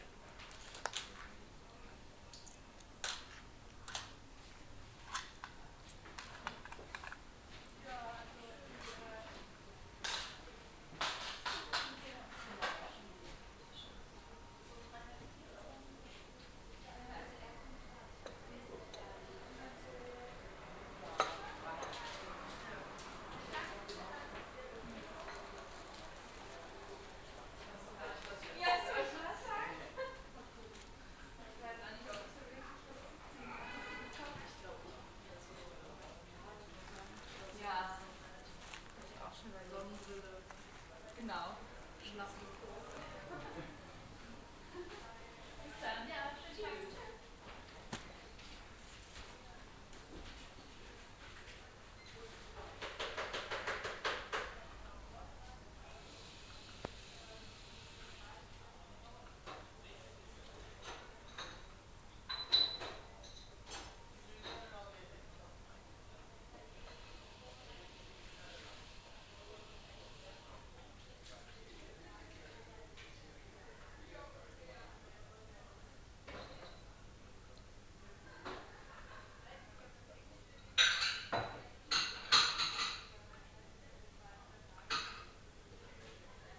cologne, ubierring, cafe bude - cologne, south, ubierring, caffe bar
soundmap: cologne, / nrw
indoor atmo - cafe bude - mittags
project: social ambiences/ listen to the people - in & outdoor nearfield recording